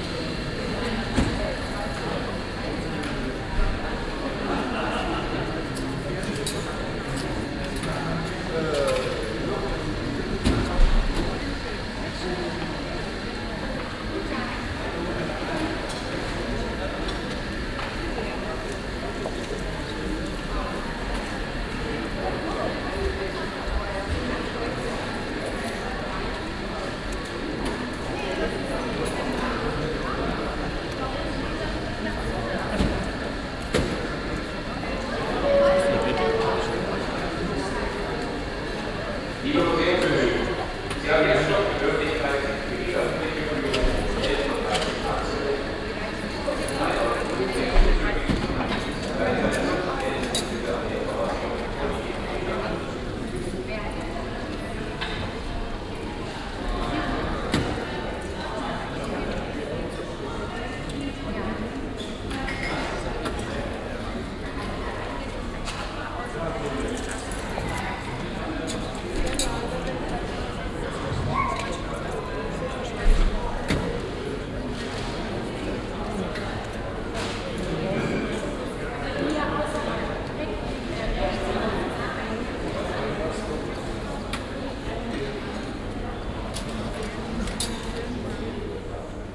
cologne, rheinauhalbinsel, schokoladenmuseum, foyer
sonntäglicher ansturm auf das schokoladenmuseum, morgens - kassenpiepsen und eine durchsage
soundmap nrw - social ambiences - city scapes - topographic field recordings